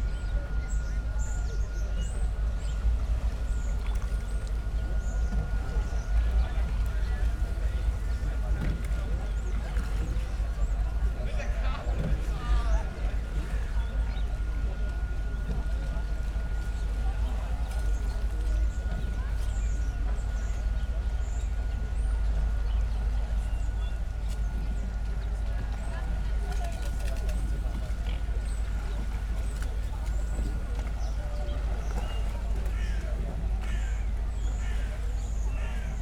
berlin, paul linke ufer - ambience at the Landwehrkanal
a warm Sunday early afternoon at the Landwehkanal, many people are out on the streets and in parks. at the other side of the canal is a flee market, the drone of a generator, a higher pitched sound of unclear origin, the murmur of many voices, bird's activity in the foreground.
(SD702, DPA4060)
Berlin, Germany, 19 October 2014